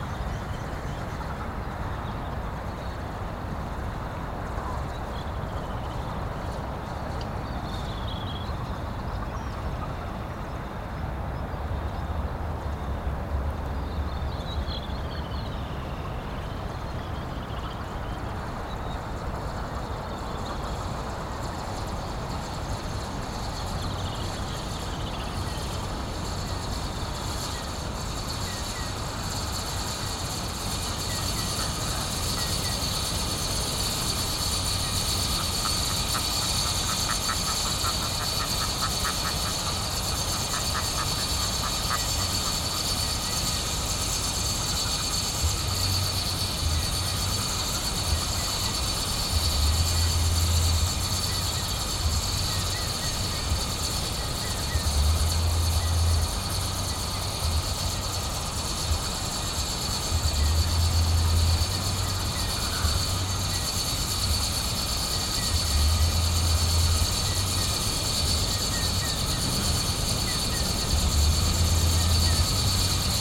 Westfjords, Iceland - Opus for power line, bass, wind, sheep and birds.
It is not every day when I am free from traffic noise. But when it happens it is possible to notice other small sounds in the surroundings.
That happened in beginning of June 2012 when I was at Krossholt at Barðastönd, in the northwest of Iceland.
One night someone was playing loud music in the neighborhood. The rumbling bass beat was noticeable all night along. During the night the wind started to blow from east with strong gusts. Suddenly nearby power line started to give a strange sound and the niggling beat from the neighborhood started to be interesting. In combination with the wind, power line, birdsong from the field and nearby cliff it started to be like a music from other planet. In fact it was a really interesting composition. Better than many modern human made compositions today. The intro is more than two minutes long, so just lay back in your chair, relax and listen.
High quality headphones are recommended.
Longer version with this recording can be found at: